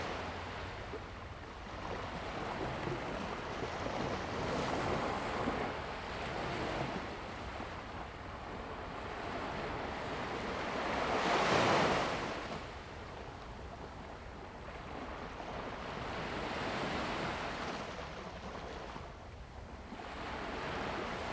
Sound of the waves, At the beach
Zoom H2n MS+XY
金門縣 (Kinmen), 福建省, Mainland - Taiwan Border, 4 November 2014, ~10:00